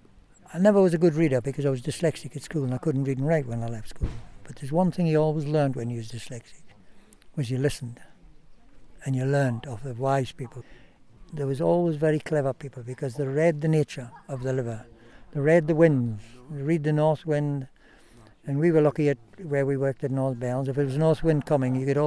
Paxton, Scottish Borders, UK - River Voices - George Purvis, Paxton
Field interview with George Purvis, skipper at Paxton netting station, one of the last two netting stations on the River Tweed in the Scottish Borders.
George talks about reading the river, the fish and the wind, and his many years' experience of net fishing.